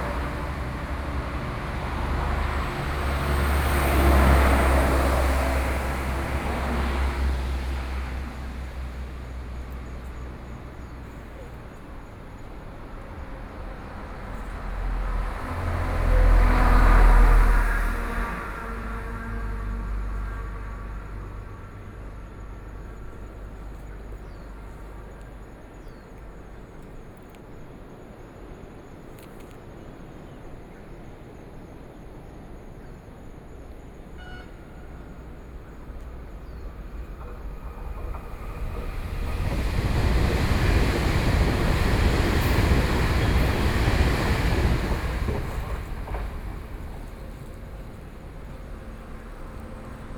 頭城鎮石城里, Yilan County - At the roadside
At the roadside, Traffic Sound, Sound of the waves, The sound of a train traveling through, Very hot weather, Frogs sound, Birdsong, Under the tree
Sony PCM D50+ Soundman OKM II